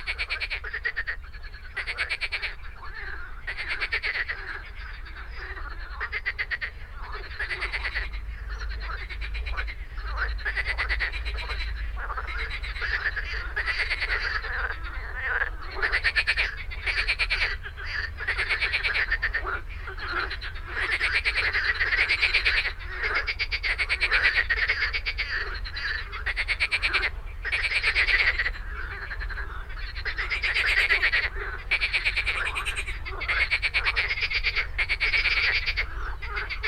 Entrelacs, France - La mare aux grenouilles.
Dans la forêt domaniale de la Deysse près d'Albens, les grenouilles en folie. Enregistreur Teac Tascam DAP1, extrait d'un CDR gravé en 2003.